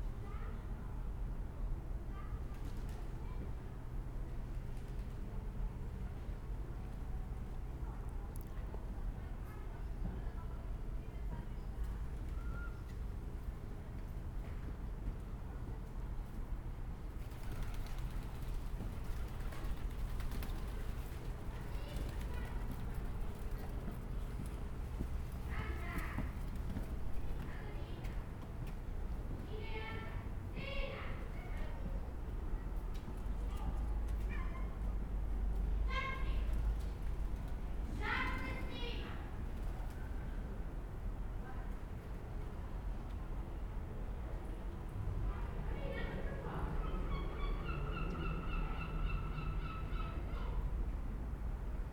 Porto, Travessa Santa Clara - metro train, street ambience
street ambience, metro train crossing on bridge above